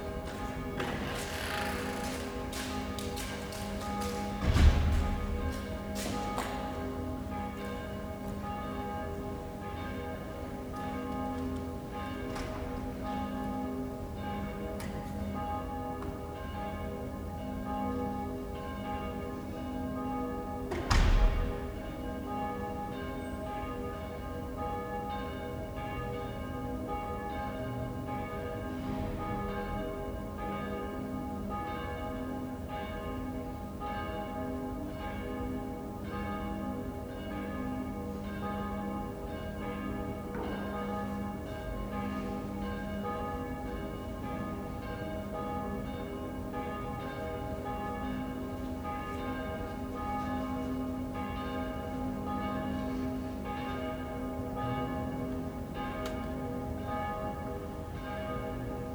Hiddenseer Str., Berlin, Germany - The first bells from my window after the defeat of Trump in the US election
A sunny blue sky Sunday morning. The first bells from my window after the defeat of Trump and the election of Biden in the US. The sound has an immediate significance of hope and relief, so different from normal. Let’s make it last!